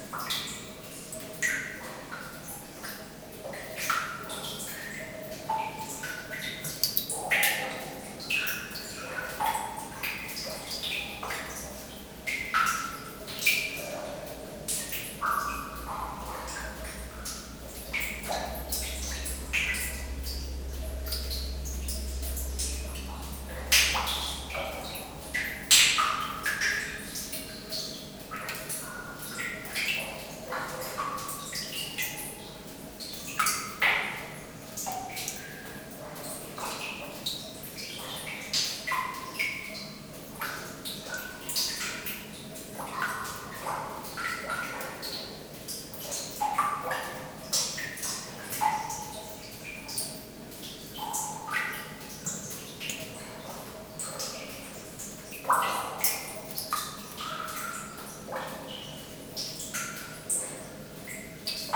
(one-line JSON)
{"title": "Namur, Belgique - Underground mine", "date": "2018-12-25 13:00:00", "description": "Short soundscape of an underground mine. Rain into the tunnel and reverb.", "latitude": "50.48", "longitude": "4.97", "altitude": "160", "timezone": "Europe/Brussels"}